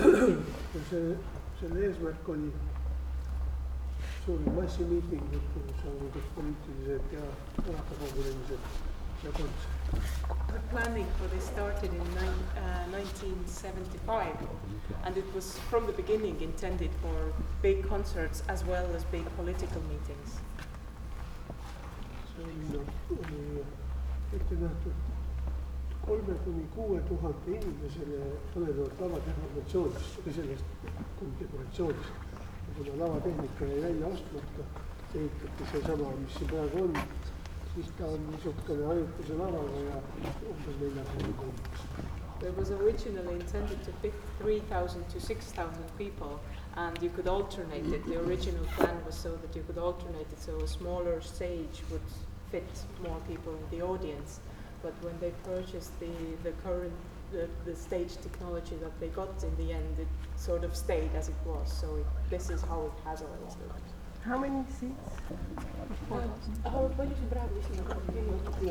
tallinn, inside linnahall, on stage with the architect.
Tallinn, Estonia